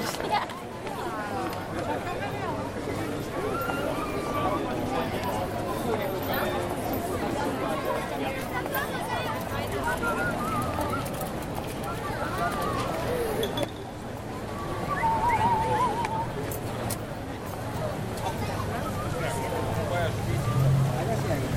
Pantheon, Piazza della Rotonda, Rome, Italy - Walking to Pantheon
Walking up Via dei Pastrini past musicians and tourists ending at the fountain, Fontana del Pantheon